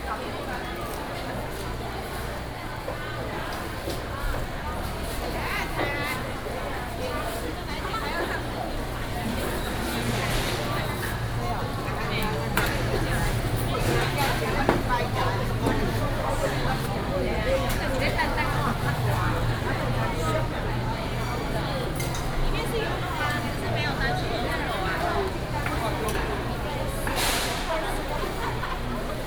竹南第二公有零售市場, Zhunan Township - Walking through the market
Walking through the market, Traffic Sound